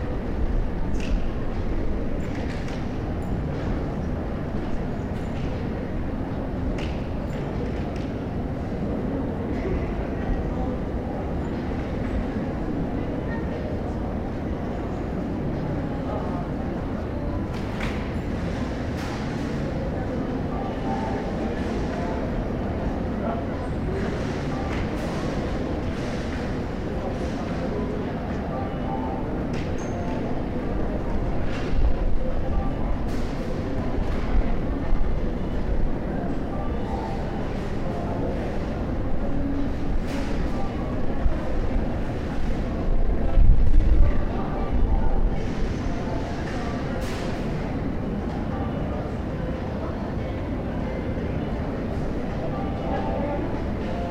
Jasmijn, Leidschendam, Nederland - Leidschendam Shoppingmall
A recording of the renewed Shopping Mall of The Netherlands. Country's biggest shopping mall. Google earth still shows the old mall. Recording made with a Philips Voice Tracer with medium mic settings.